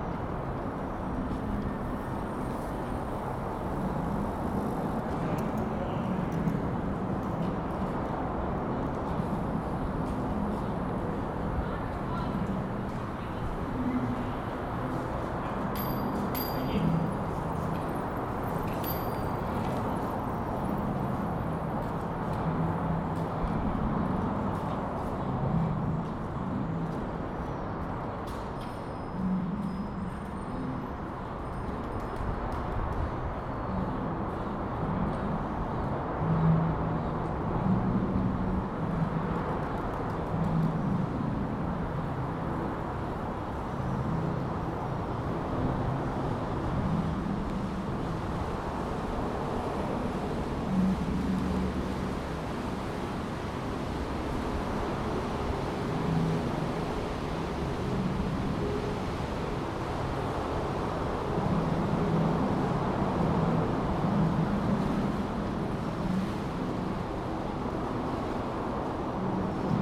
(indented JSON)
{
  "title": "Lake Shore Blvd W, Etobicoke, ON, Canada - Busy bike route passing under the gardiner",
  "date": "2020-06-07 02:42:00",
  "description": "Recorded underneath the Gardiner Expressway bridge over the Humber River, right next to a bike lane underpass. The space underneath resonates with the traffic passing overhead, large groups of cyclists are passing by, and a few small boats towards the lake\nRecorded on a zoom H2N.",
  "latitude": "43.63",
  "longitude": "-79.47",
  "altitude": "76",
  "timezone": "America/Toronto"
}